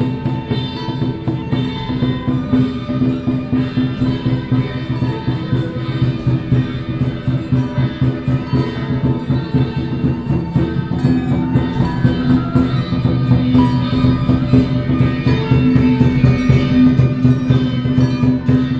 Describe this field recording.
In the square in front of the temple, Traffic sound, Firecrackers sound